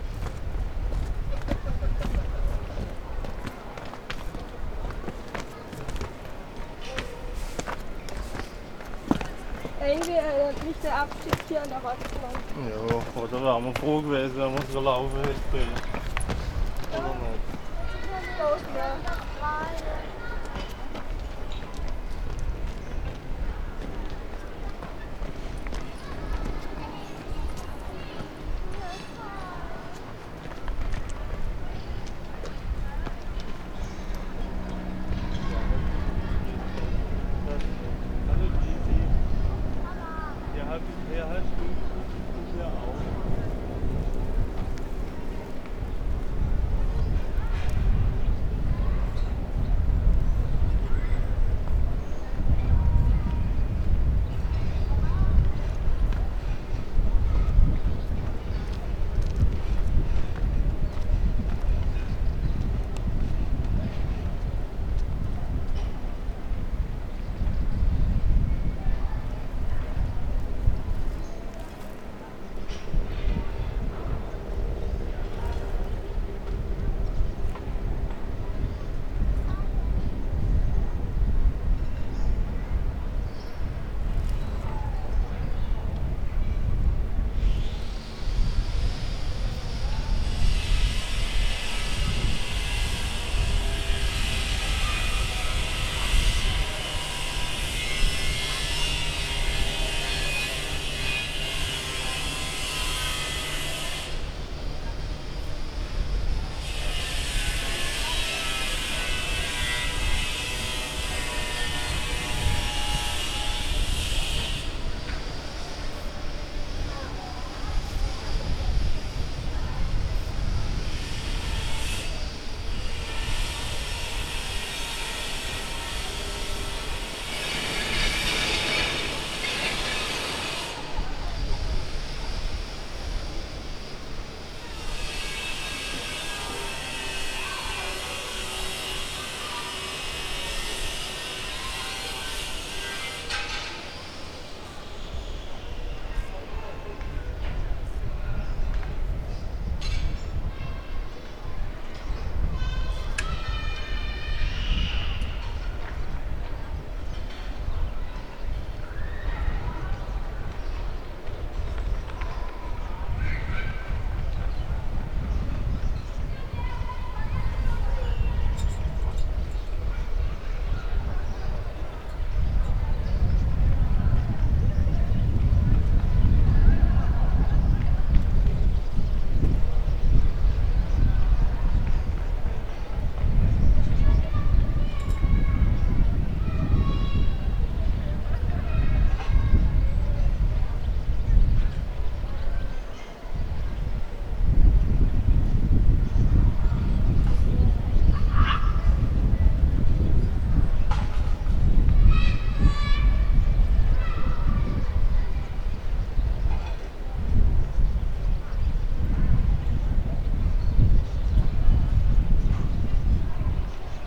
Manarola, vineyard path towards the city - view over the city
city ambience gliding over the hill.
Manarola, La Spezia, Italy, 5 September 2014, 14:12